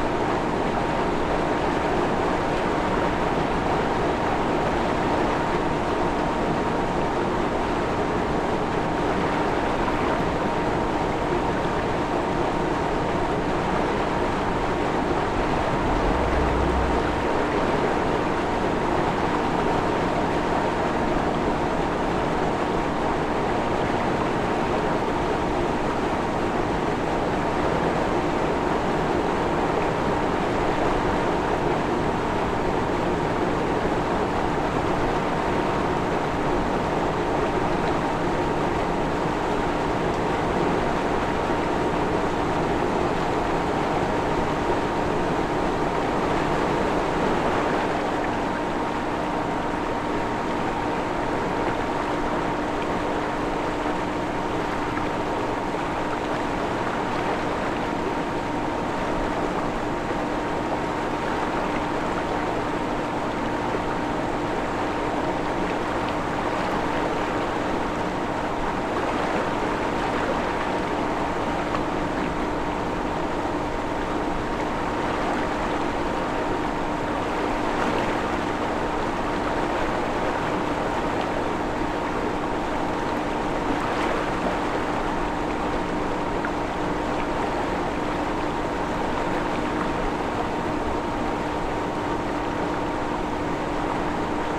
system for generating electrical power using flowing water in canals, rivers and the like water streams.
Captation : ZOOMH6